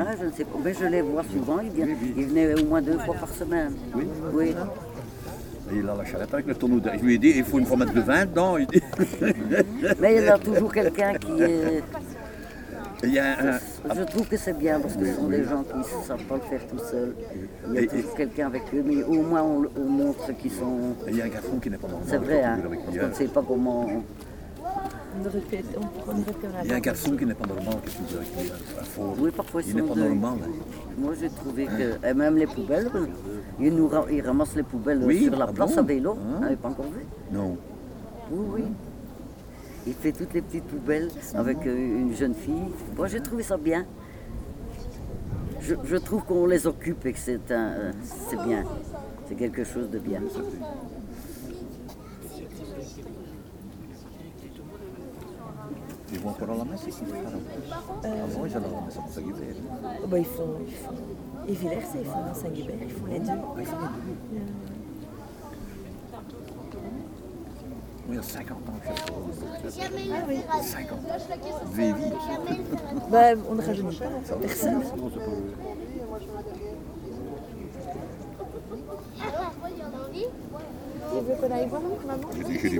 Soapbox race in Mont-St-Guibert, the very beginning of the race.
Mont-Saint-Guibert, Belgium, 13 September 2015